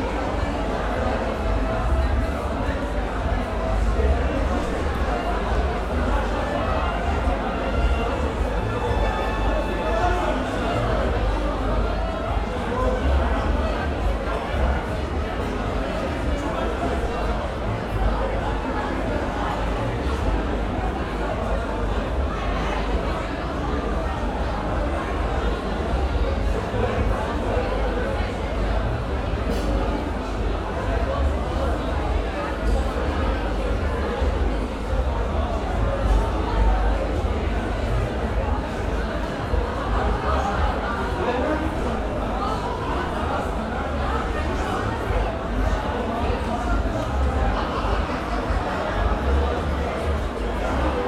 recording made from my window of the club and street noise on a Friday night